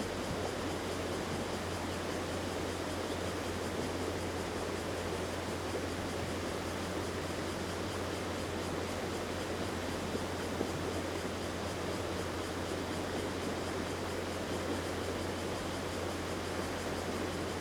新福里, Guanshan Township - Cicadas and Stream
Cicadas sound, Traffic Sound, Stream, Very hot weather
Zoom H2n MS+ XY
Taitung County, Taiwan